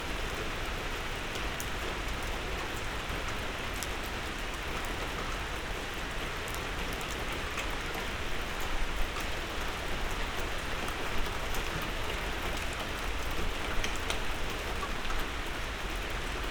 berlin, sanderstraße: unter balkon - the city, the country & me: under balcony
the city, the country & me: july 17, 2012
99 facets of rain
Berlin, Germany, 5 June, 02:30